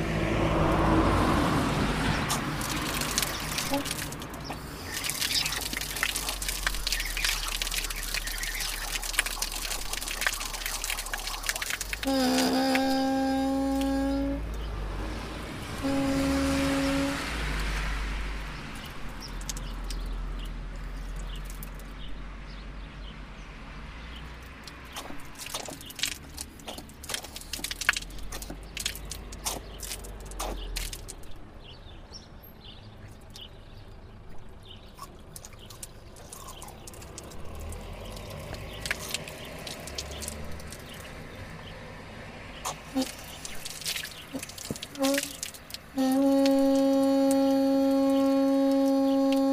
Chef Lieu, Aillon-le-Jeune, France - Robinet
Jour de canicule à Aillon-le-jeune le goudron de la route est fondu. Bruits de robinet du bassin public.